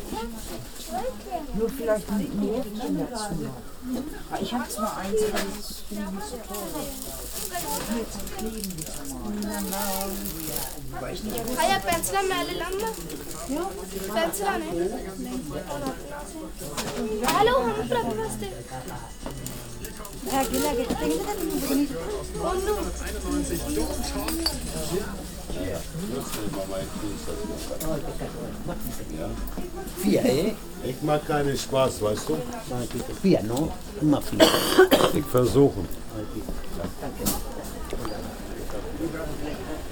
1 Euro Shop. Große Bergstraße. 31.10.2009 - Große Bergstraße/Möbelhaus Moorfleet
Hamburg, Germany, 31 October